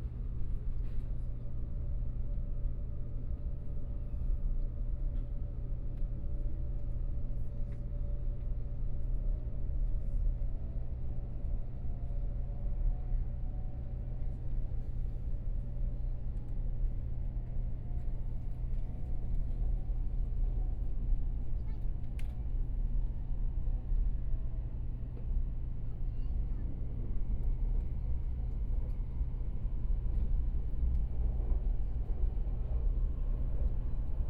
Changhua County, Taiwan - Taiwan High Speed Rail
Taiwan High Speed Rail, from Taichung Station To Chiayi, Binaural recordings, Zoom H4n+ Soundman OKM II
Yuanlin Township, 湖水巷13-1號, 30 January 2014